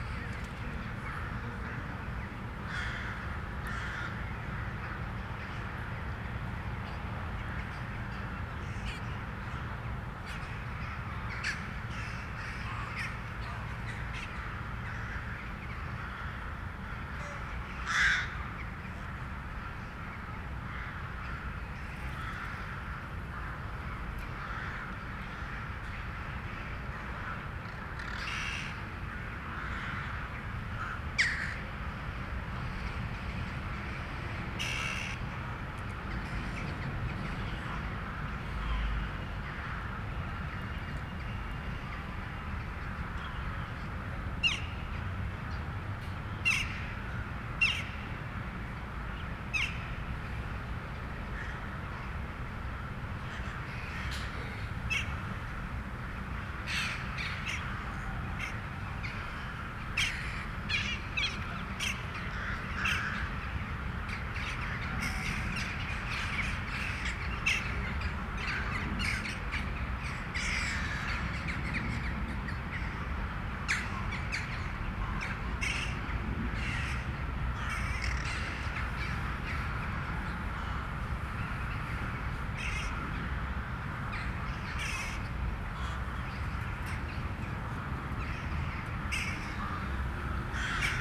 {
  "title": "Strada Doamnei, București, Romania - migration of crows",
  "date": "2017-09-27 07:24:00",
  "description": "recording from the window of Czech Embassy early morning: thousands of crows woke up to move south of north while singing, i could not judge where they are going.",
  "latitude": "44.43",
  "longitude": "26.10",
  "altitude": "78",
  "timezone": "Europe/Bucharest"
}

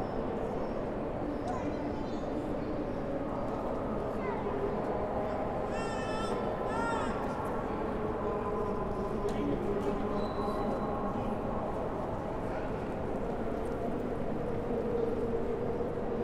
{
  "title": "B-Ebene, Am Hauptbahnhof, Frankfurt am Main, Deutschland - Entrance of the Station in Corona Times",
  "date": "2020-04-15 15:50:00",
  "description": "The recording is made in the entrance hall of the main station next to the doors through which the passengers enter the station. Very quiet.",
  "latitude": "50.11",
  "longitude": "8.66",
  "altitude": "110",
  "timezone": "Europe/Berlin"
}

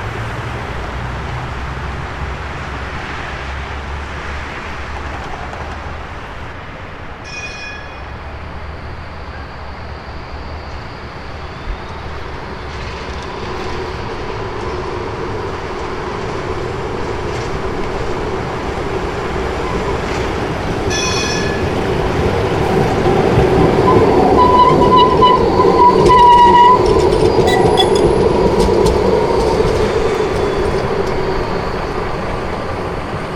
{
  "title": "Victoria Square Fountain - 7:00pm Clock Bells, Adelaide, South Australia - Victoria Square Fountain, 7:00pm Clock Bells",
  "date": "2008-09-01 18:31:00",
  "description": "Victoria Square in the centre of Adelaide, South Australia. The main fountain is turned off at night leaving just a small bubbling waterfall. The clock in the Post Office tower strikes 7:00pm. A tram leaves the stop and heads north along King William Street. Other traffic travels the road, still wet from recent rain.\nRecorded with Sennheiser ME66.\nRecording made at 7:00pm on 1st Sept 2008",
  "latitude": "-34.93",
  "longitude": "138.60",
  "altitude": "58",
  "timezone": "Australia/South"
}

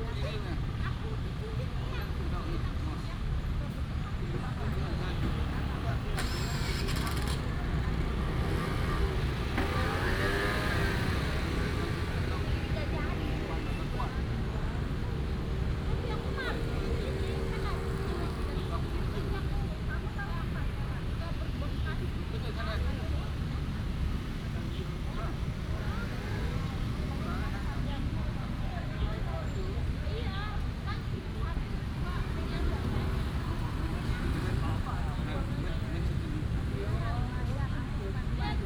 {
  "title": "文昌公園, East Dist., Chiayi City - in the Park",
  "date": "2017-04-18 09:53:00",
  "description": "in the Park, Traffic sound, Bird sound, The voice of the market",
  "latitude": "23.48",
  "longitude": "120.46",
  "altitude": "42",
  "timezone": "Asia/Taipei"
}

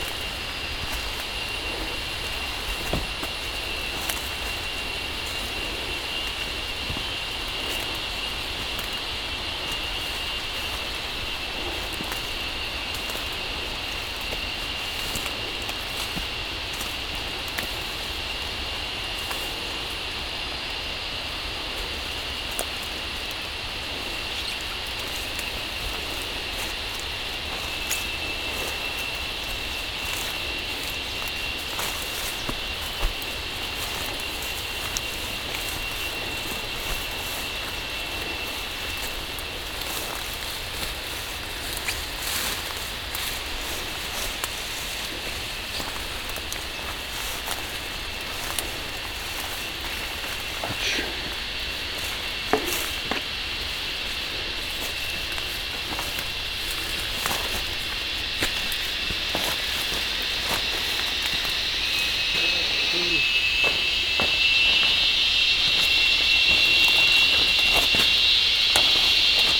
{
  "title": "Tambon Pa Pae, Amphoe Mae Taeng, Chang Wat Chiang Mai, Thailand - Mörderzikaden Trekking mit Ben",
  "date": "2017-08-25 16:00:00",
  "description": "Killer cicadas, immensely shrieking, while trecking in the woods around Pa Pae near the Myanmar border close to Mae Hong Son, Thailand. Ben is running an amazing refugees children school there, and does informative and relaxing trecking tours.",
  "latitude": "19.12",
  "longitude": "98.71",
  "altitude": "859",
  "timezone": "Asia/Bangkok"
}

